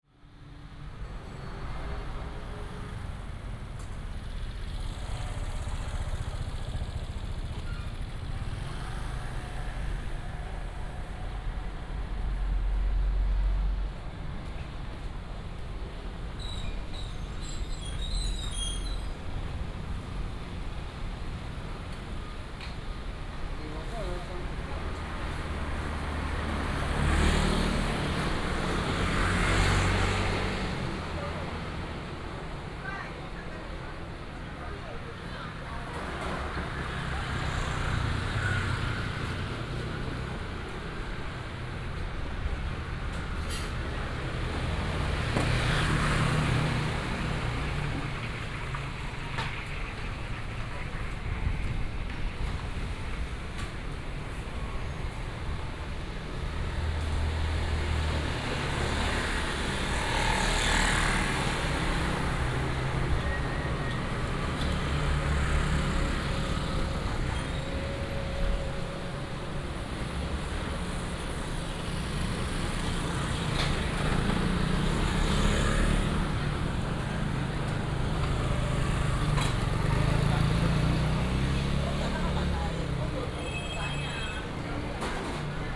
中華街, Fengshan Dist., Kaohsiung City - Food Shop Street
Walking through the Food Shop Street, traffic sound